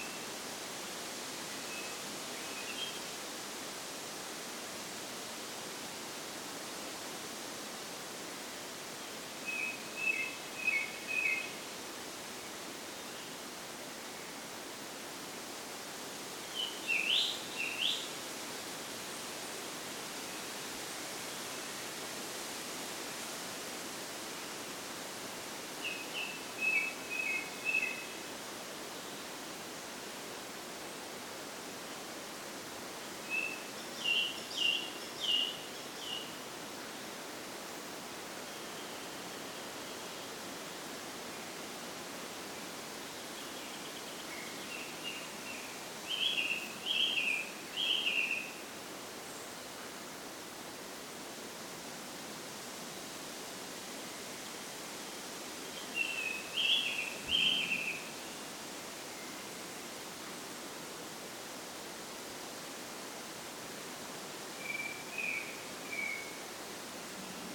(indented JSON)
{
  "title": "Steilküste Brodau, Zum Gut, Schashagen, Deutschland - Birds in Funeral Forest",
  "date": "2018-11-16 17:14:00",
  "description": "This is a Stereo Recording of Birds having a chat in a Funeral Forest directly at the Baltic Sea. Recorded with a Zoom H6 and MS capsule",
  "latitude": "54.11",
  "longitude": "10.92",
  "altitude": "18",
  "timezone": "Europe/Berlin"
}